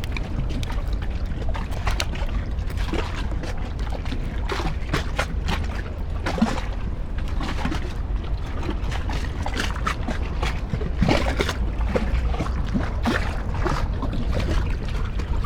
Märkisches Ufer, Mitte, Berlin, Germany - along the river Spree, next day
Sonopoetic paths Berlin
September 2015